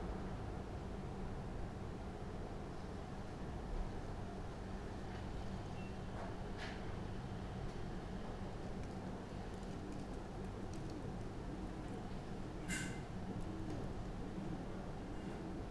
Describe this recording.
summer afternoon with 40˚, doves, dry leaves ...